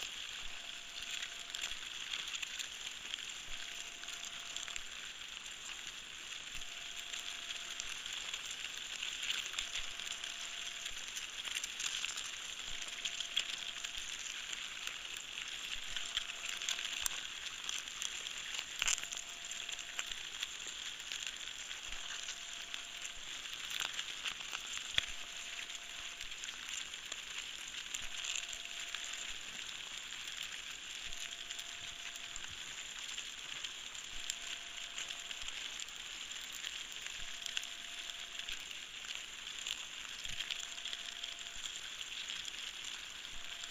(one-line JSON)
{"title": "Trehörningsjö, myrstack - Anthill", "date": "2010-07-18 18:43:00", "description": "Anthill at the shore side of the rapids Husån. Recorded with piezo transducer at the World Listening Day, 18th july 2010.", "latitude": "63.69", "longitude": "18.85", "altitude": "160", "timezone": "Europe/Stockholm"}